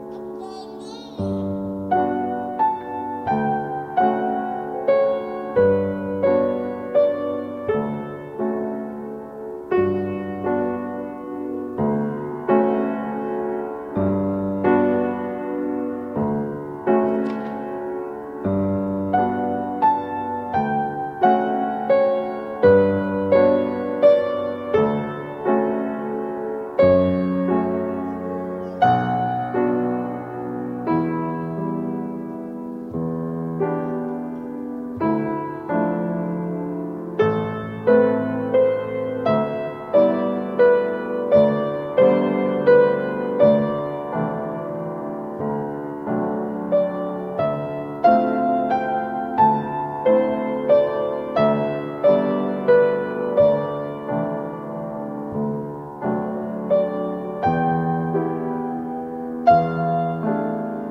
Montreal: Saint-Louis-de-Gonzague Church - Saint-Louis-de-Gonzague Church
equipment used: digital recorder, two dynamic microphones and stands
This is a recording of my wife playing the piano for our baby daughter in the church (Reverberant space with arch ceiling). I used ORTF stereo recording techniques with a distance of 140 cm from the sound source.